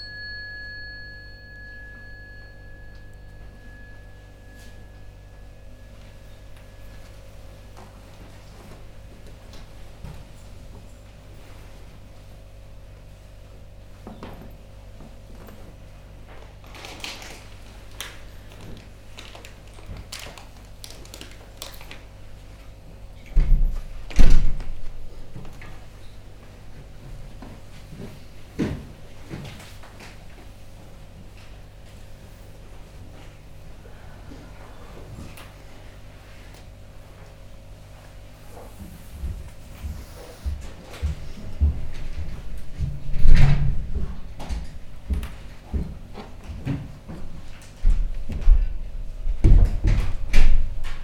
Unnamed Road, Dorchester, UK - New Barn Morning Meditation Pt3
This upload captures the end of the morning sitting, the bells sounding to invite participants to stand, bow and leave the room together. Participants are in noble silence as they leave (a period of silence lasting from the evening sitting meditation at 8.30pm to breakfast at 8.30am). (Sennheiser 8020s either side of a Jecklin Disk on a SD MixPre6)
October 2017